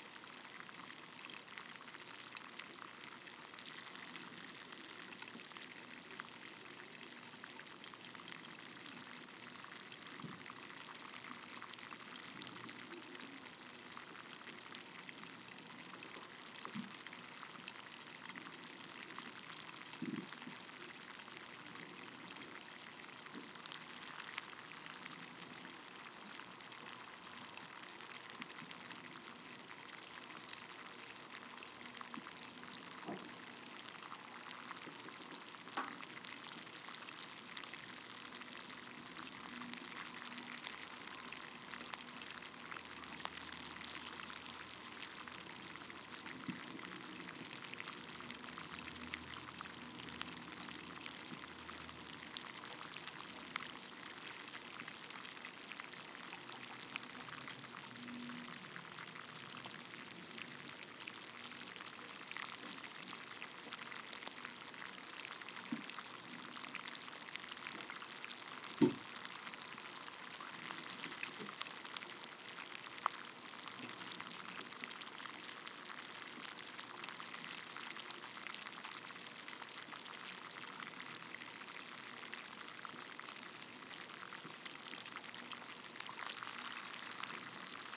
막걸리 만들기 과정_(시작 120시 후에) Rice wine fermentation (5th day)